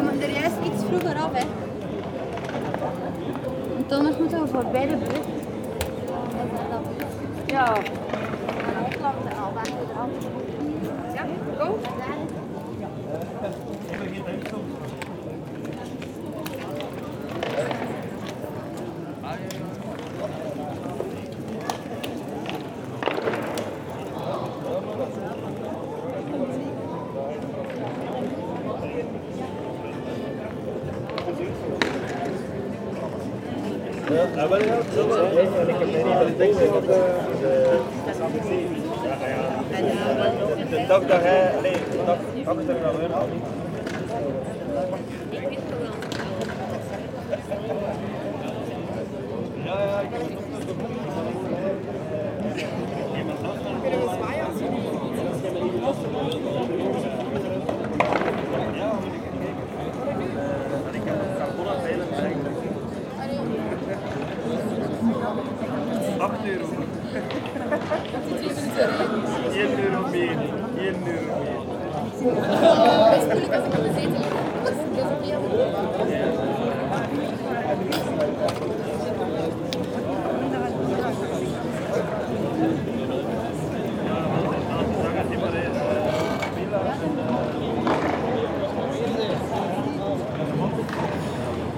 Dendermonde, België - Dendermonde carillon
On the main square of the Dendermonde city, people drinking on the shiny bar terraces and at the end, the beautiful carillon ringing.
Dendermonde, Belgium, 23 February 2019